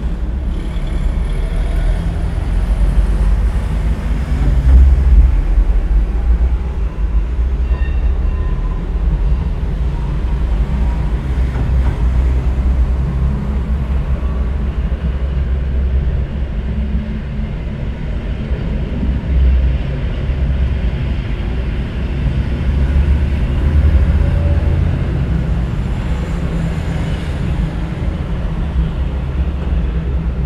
Koluszki, Poland - viaduct
Under the viaduct, 3rd World Listening Day.
18 July 2012